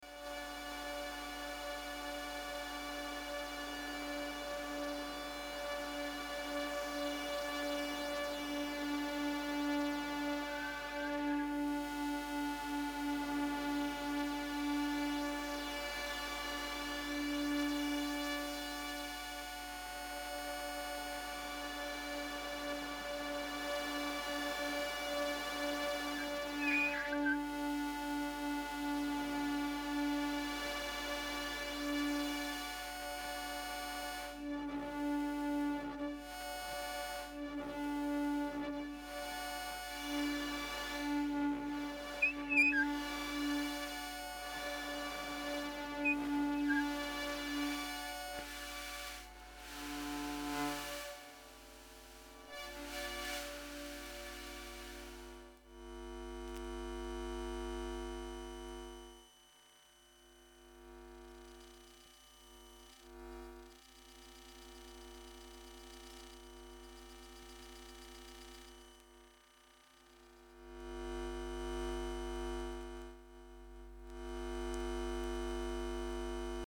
micro Elektrosluch 3+
Festival Bien urbain
Jérome Fino & Somaticae
5 June 2018, 16:00